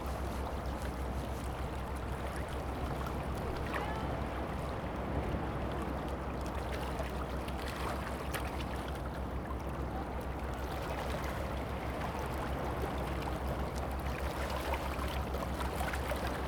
西子灣風景區, Kaohsiung County - The waves move
Sound of the waves, Beach
Zoom H2n MS+XY
Gushan District, Kaohsiung City, Taiwan, 22 November 2016